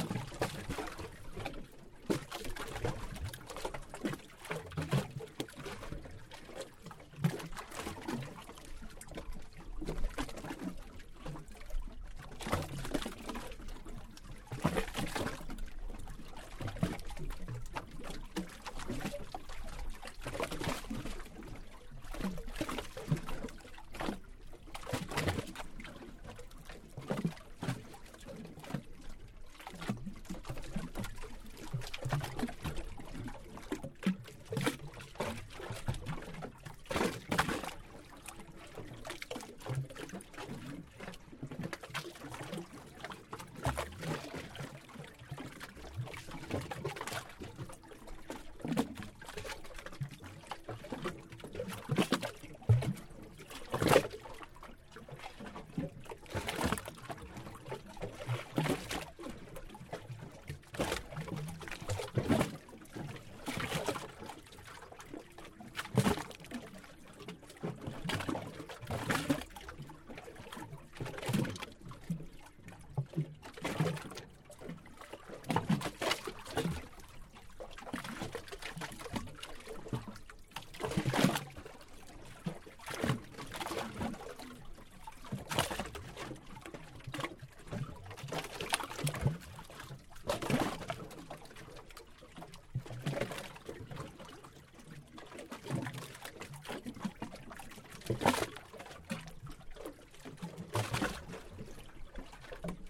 Kuopio, Suomi, Matkustajasatama - The waves hit the bay of Kuopio (Sataman laitureihin iskeytyvät korkeat aallot)
Recorder this moment in the middle of June, as the waves hit the Pier at the harbour of Kuopio
Zoom H4n in hand.